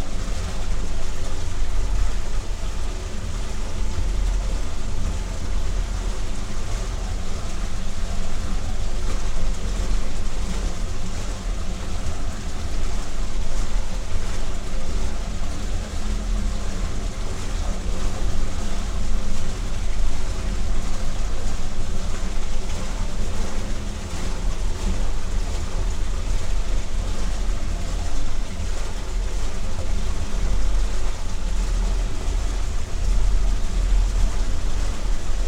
microphones placed down into the small dam